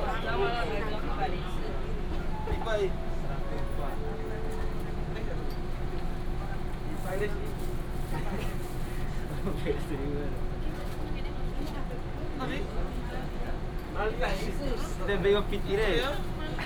{"title": "Changhua City, Changhua County - Inside the train compartment", "date": "2018-02-17 09:22:00", "description": "Inside the train compartment, The train arrived, Station message broadcast\nBinaural recordings, Sony PCM D100+ Soundman OKM II", "latitude": "24.09", "longitude": "120.56", "altitude": "20", "timezone": "Asia/Taipei"}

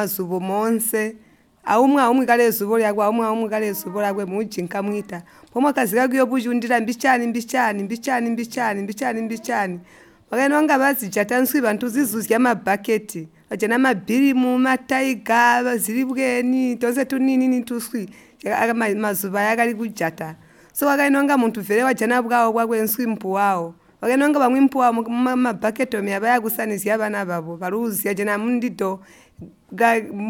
{"title": "Tusimpe Pastoral Centre, Binga, Zimbabwe - the women used to fish with a zubo...", "date": "2016-07-05 11:30:00", "description": "Ottilia Tshuma, community based facilitator at Simatelele, describes how the Batonga women used to fish together as a team when the Zambezi was still a stream… the women used baskets which are called “zubo” in the local language Chitonga… (and this is where the women’s organisation derived its name from…)", "latitude": "-17.63", "longitude": "27.33", "altitude": "605", "timezone": "GMT+1"}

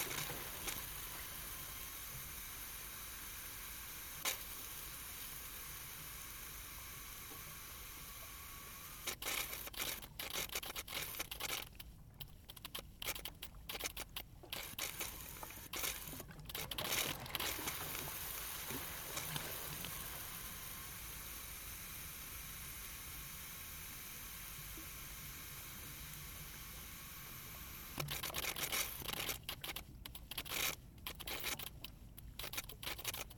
{"title": "Sint-Annabos, Antwerpen, België - Malfunctioning valve", "date": "2019-02-24 12:48:00", "description": "[H4n Pro] Malfunctioning valve on a water pipeline through Sint-Annabos.", "latitude": "51.23", "longitude": "4.36", "altitude": "4", "timezone": "Europe/Brussels"}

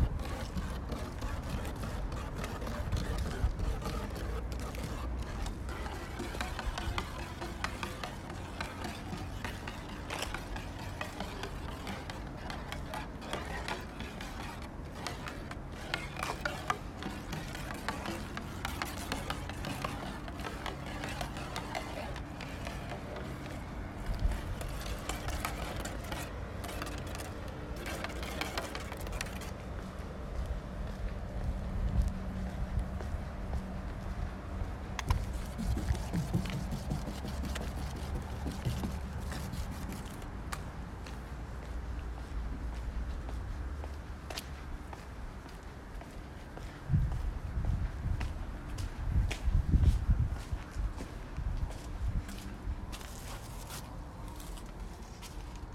{"title": "Länsmansvägen, Umeå, Sverige - Walk home", "date": "2020-09-06 15:16:00", "latitude": "63.82", "longitude": "20.25", "altitude": "21", "timezone": "Europe/Stockholm"}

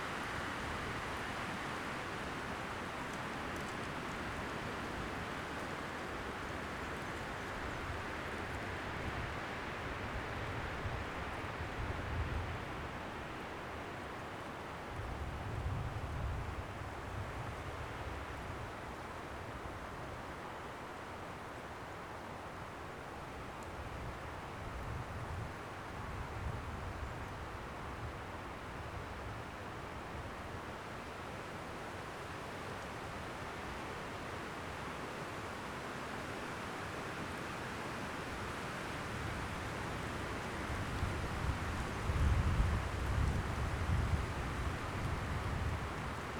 {"title": "Ozone National Forest - Ozone Recreation Area & Campground", "date": "2022-04-15 09:05:00", "description": "Sounds of the Ozone Recreation Area & Campground inside the Ozone National Forest. The wind started to pickup so the sound of the wind in the trees can be heard.", "latitude": "35.67", "longitude": "-93.45", "altitude": "580", "timezone": "America/Chicago"}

